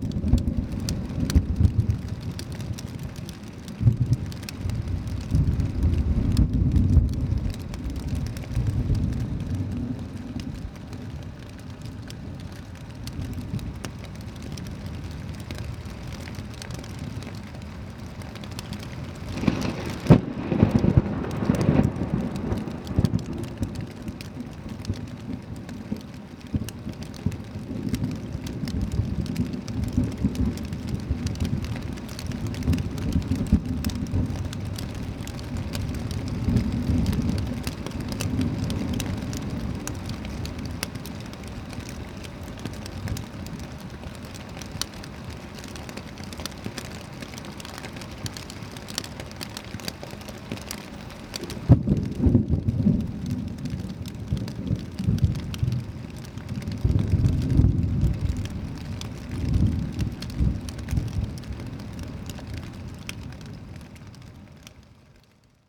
Yonghe, New Taipei City - Thunderstorm
Thunderstorm, Sony ECM-MS907, Sony Hi-MD MZ-RH1
板橋區 (Banqiao), 新北市 (New Taipei City), 中華民國, 28 June 2011